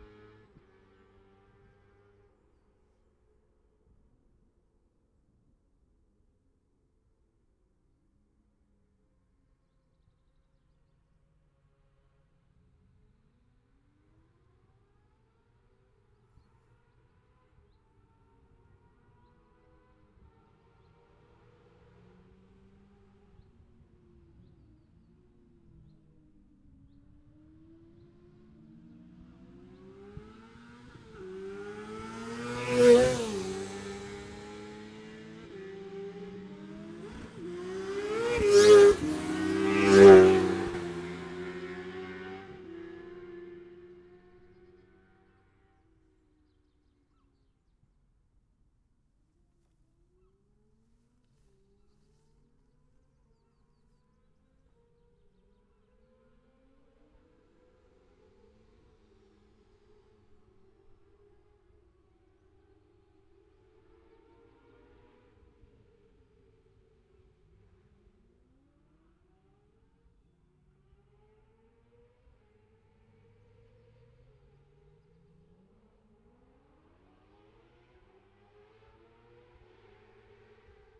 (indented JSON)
{
  "title": "Scarborough, UK - motorcycle road racing 2017 ... sidecars ...",
  "date": "2017-04-22 09:59:00",
  "description": "Sidecar practice ... Bob Smith Spring Cup ... Olivers Mount ... Scarborough ... open lavalier mics clipped to sandwich box ...",
  "latitude": "54.27",
  "longitude": "-0.41",
  "altitude": "147",
  "timezone": "Europe/London"
}